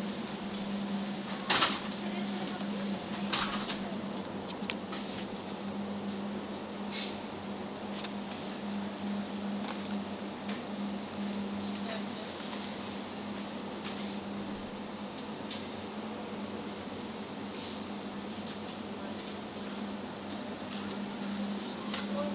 berlin, maybachufer: supermarkt - the city, the country & me: last day in the life of a supermarket
shopping in a supermarket (soundwalk)
the city, the country & me: january 7, 2012
Berlin, Germany